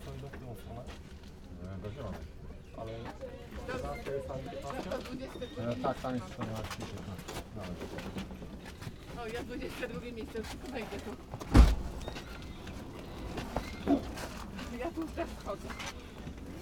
Warsaw, central train station, platform - train arival commotion
train to Poznan arives, passengers nervously looking for their compartments, entering the carriage, squeeze through the crowd.